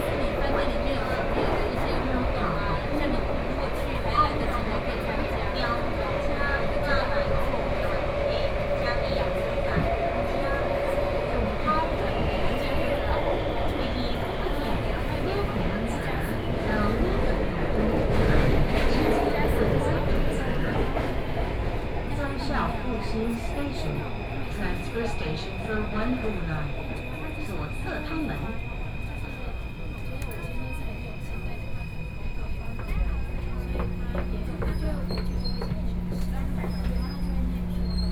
Taipei, Taiwan - Take the MRT